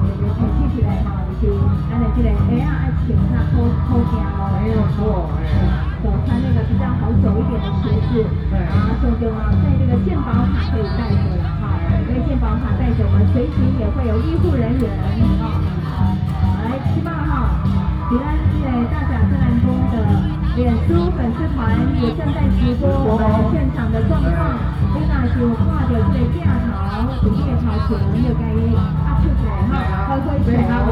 Dajia Jenn Lann Temple, 大甲區大甲里 - In the square of the temple
Temple fair, In the square of the temple
24 March 2017, 15:51